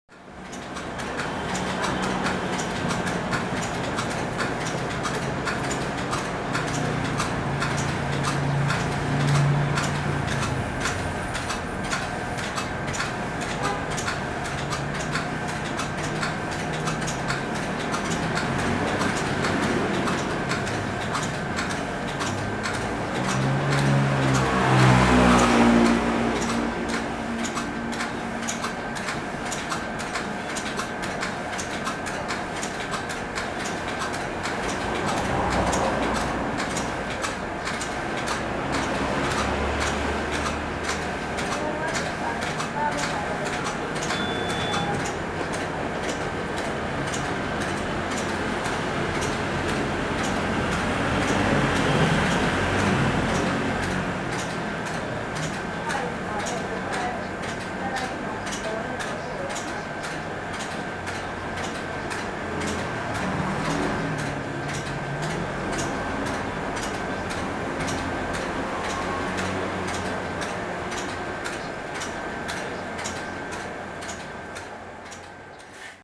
Ren’ai St., Sanchong Dist., New Taipei City - Photocopy shop
Photocopy shop, Traffic Sound
Sony Hi-MD MZ-RH1 +Sony ECM-MS907
New Taipei City, Taiwan, 10 February 2012